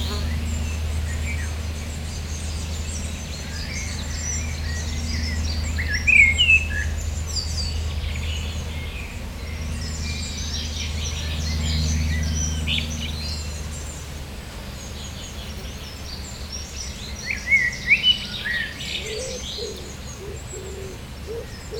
Chaumont-Gistoux, Belgique - Rural landscape
A rural landcape, with Common Wood Pigeon, Great tit, House Sparrows and too much planes.